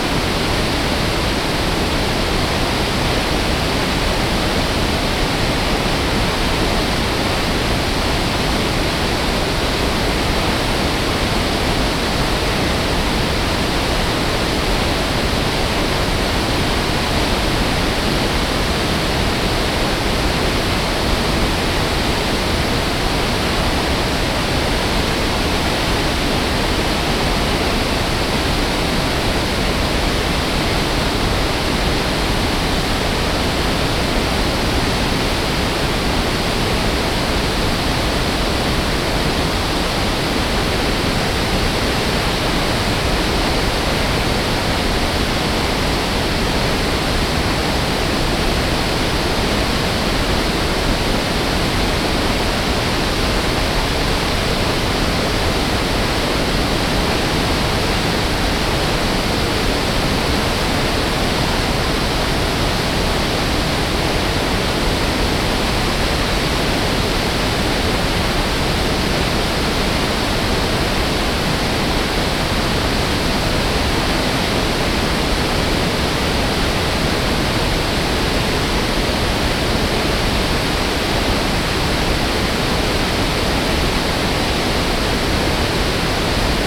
Croton Dam Rd, Croton-On-Hudson, NY, USA - Croton Dam
The intense roar of the waterfall colors the surroundings.
3 April 2010, ~13:00